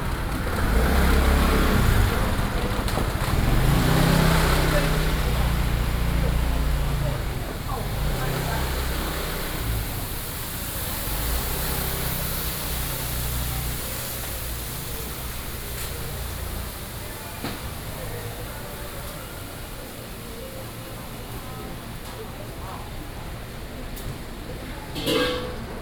Bo’ai 1st St., Shulin Dist., New Taipei City - Walking in a small alley
Walking in a small alley, Traffic Sound
Sony PCM D50+ Soundman OKM II